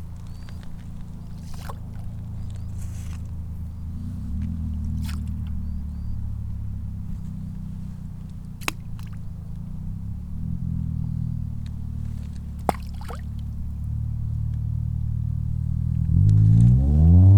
Sollefteå, Sverige - Throwing some stones in the river
On the World Listening Day of 2012 - 18th july 2012. From a soundwalk in Sollefteå, Sweden. Throwing some stones and sand in the river Ångermanland. Car passing by on the riverside road in Sollefteå. WLD